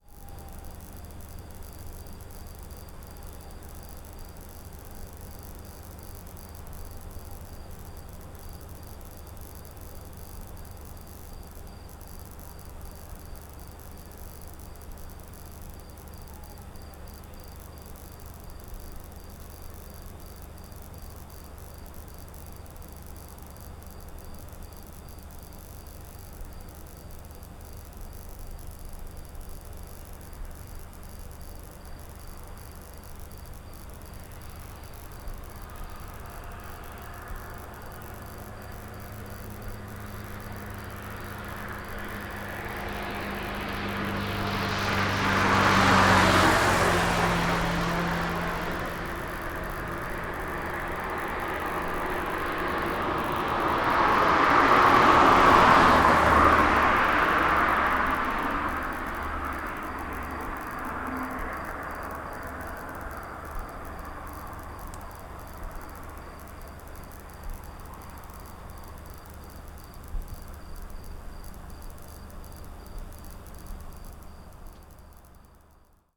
Crete, Chersonisos, in front of hotel - transformer whispers
gentle snaps and sparks of a transformer and a night cricket. unable to get a clean recording even at 4 in the morning. a car entered the sound scape.